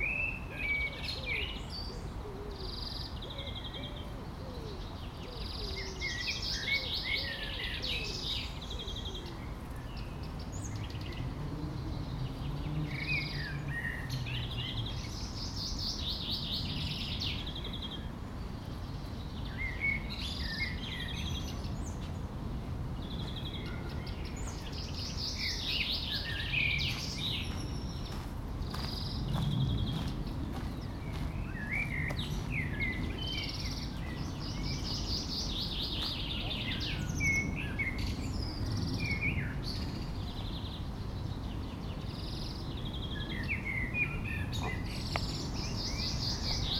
Schloss Charlottenburg, Berlin, Germany - Birds at Schloss Charlottenburg
Birds at Schloss Charlottenburg. People pass by in conversation, footsteps on gravel.
recorded with Tascam DR 100 mkiii